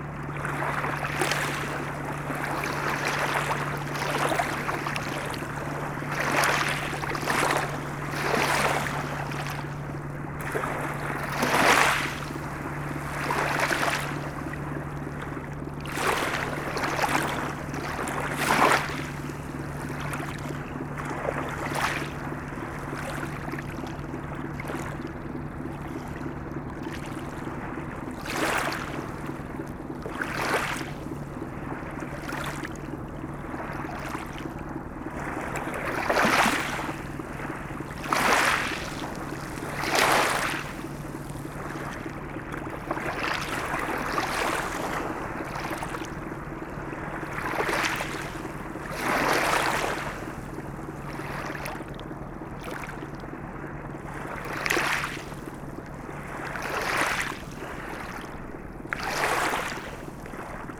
Kritzendorf, Danube - Donauwellen in Kritzendorf (schuettelgrat)
Wenn die Schiffe kommen, ist es mit der Sonntagsruhe vorbei. Und das ist gut so, denken sich die Kieselsteine
derweil die Uferpflanzen von den Wellen überwältigt werden.
(rp)
Klosterneuburg, Austria, 18 October 2009, 14:30